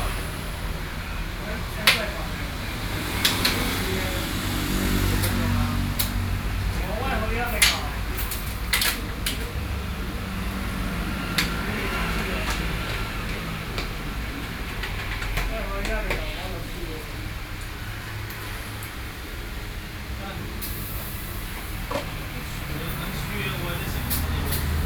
Beitou, Taipei - Motorcycle repair shop